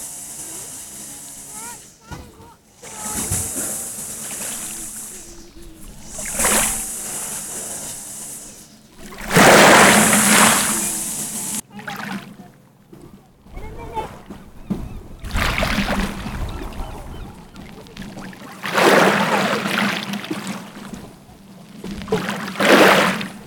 Recorded on the pebbles facing the sea.
South West England, England, United Kingdom